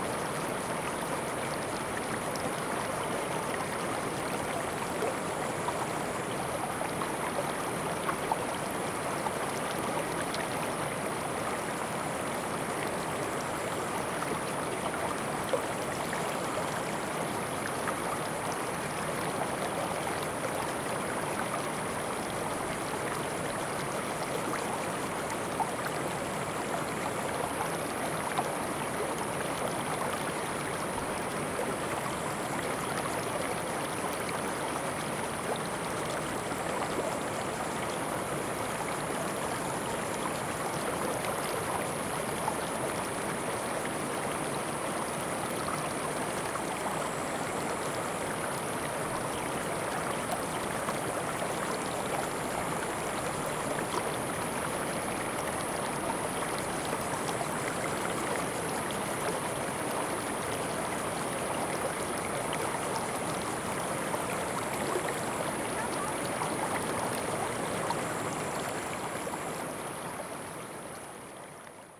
白鮑溪, Shoufeng Township - The sound of water streams
The sound of water streams, Very hot weather
Zoom H2n MS+ XY
Shoufeng Township, Hualien County, Taiwan